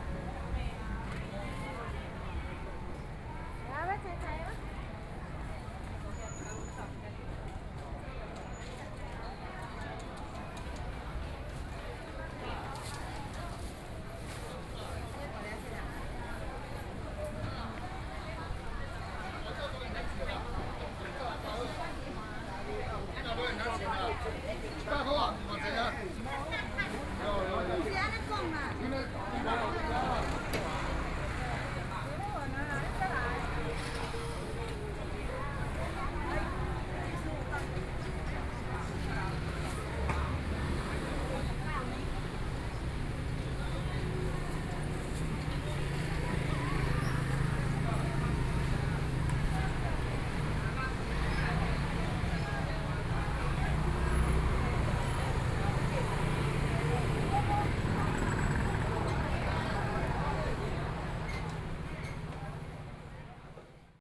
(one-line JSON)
{"title": "Yongyi St., Xiaogang Dist. - Walking in traditional markets", "date": "2014-05-14 08:20:00", "description": "Walking in traditional markets, Traffic Sound", "latitude": "22.57", "longitude": "120.35", "altitude": "15", "timezone": "Asia/Taipei"}